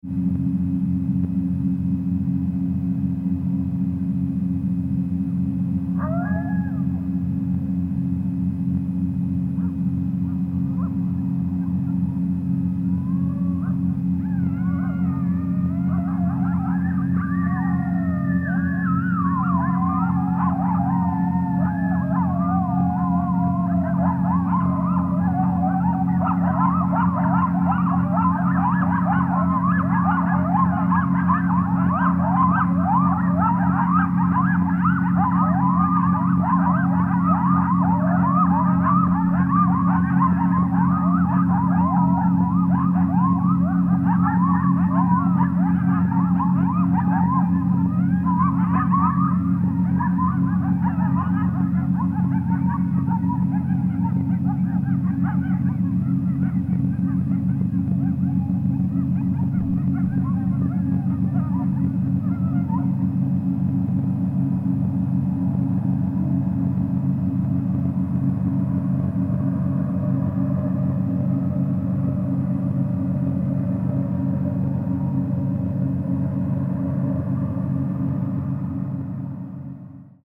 Bartlett, CA, USA - Coyotes Howling with Aeolian Harp in Background
Metabolic Studio Sonic Division Archives:
Recording of a coyotes howling outside abandoned factory next to a large silo turned into an Aeolian Harp. Background droning tones are the harp itself which is a series of metal strings running along side the outside of silo. Two microphones are placed in abandoned factory and near the aeolian harp/silo